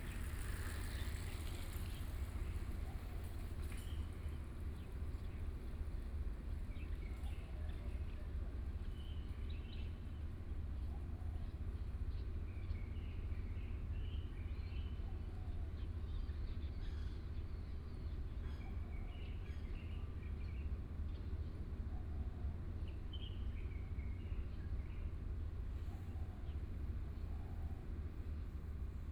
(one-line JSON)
{"title": "Dayong Rd., Yancheng Dist. - Pigeon", "date": "2014-05-14 06:34:00", "description": "Birds singing（Pigeon, At the intersection, Sound distant fishing, People walking in the morning, Bicycle", "latitude": "22.62", "longitude": "120.28", "altitude": "1", "timezone": "Asia/Taipei"}